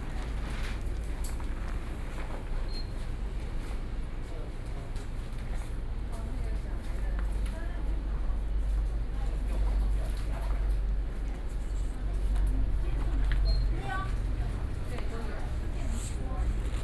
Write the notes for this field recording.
At the post office, Sony PCM D50 + Soundman OKM II